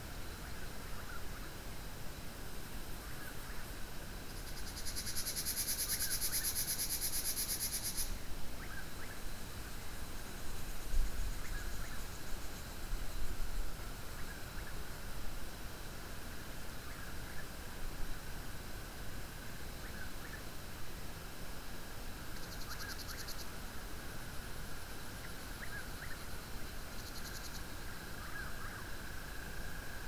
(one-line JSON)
{"title": "night sounds: Pedernales State Park, Johnson City, Texas - night sounds: Pedernales State Park", "date": "2012-05-18 23:13:00", "description": "Pedernales: My favorite primitive camping spot and site of MANY good times. Wind, leaves, cicadas, frogs, Whippoorwills. other bugs..\nTascam DR100 MK-2 internal cardiods", "latitude": "30.30", "longitude": "-98.23", "altitude": "271", "timezone": "America/Chicago"}